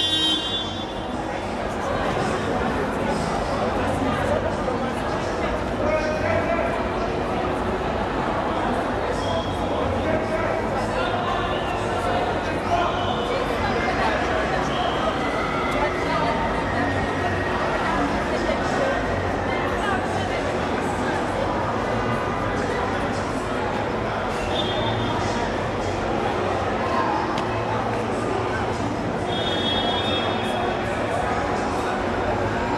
Bree Street Taxi Rank, Newtown, Johannesburg, South Africa - Everyone's broadcasting...
A stroll through Bree street taxi rank… I often travel from here… this day, I came for listening… everyone’s “broadcasting” here… I drift across the ground floor level … between parking combies, waiting and lingering people … along the small stalls of the traders… then half a floor up through the “arcade” along the market stalls…
(mini-disk recording)
6 March, ~14:00